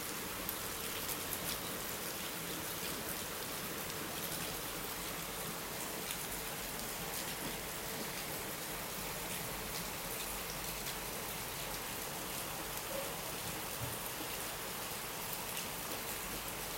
recorded june 22nd, 2008, around 10 p. m.
project: "hasenbrot - a private sound diary"
Waldbröl, Germany